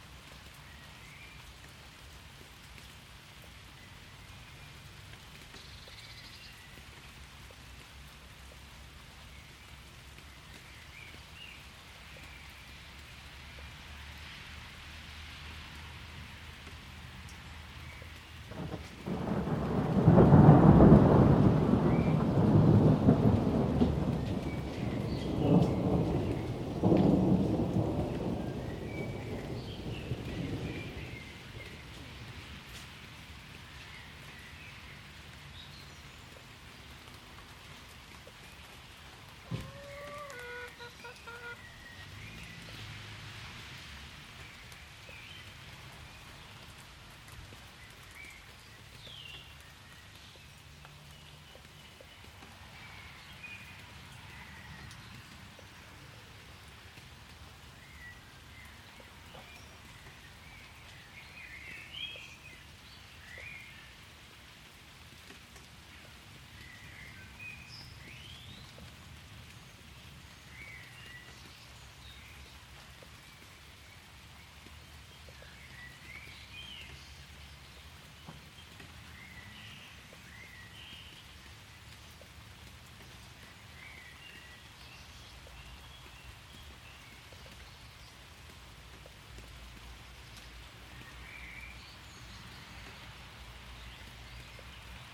Rolling thunder through Bournemouth in the distance and a little light rain, traffic and birdsong in the pleasure gardens.